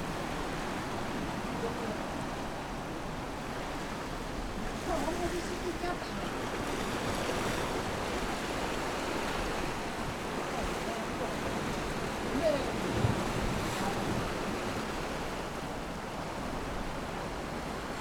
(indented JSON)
{
  "title": "鐵堡, Nangan Township - Abandoned military sites",
  "date": "2014-10-14 13:38:00",
  "description": "Sound wave, On the rocky coast, Abandoned military sites, Tourists\nZoom H6 +Rode NT4",
  "latitude": "26.14",
  "longitude": "119.92",
  "altitude": "8",
  "timezone": "Asia/Taipei"
}